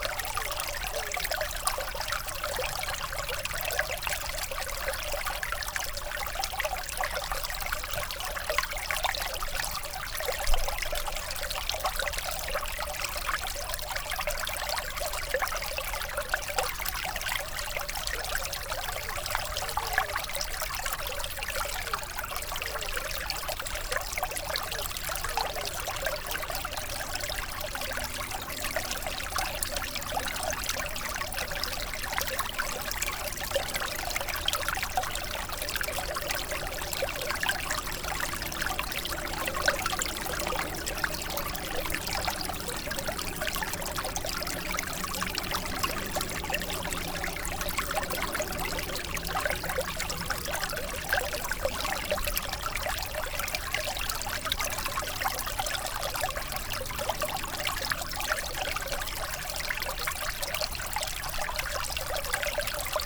Mont-Saint-Guibert, Belgique - Ornoy stream
The Ornoy stream, a very small river coming from the fields.
Mont-Saint-Guibert, Belgium, 3 June 2016, 19:40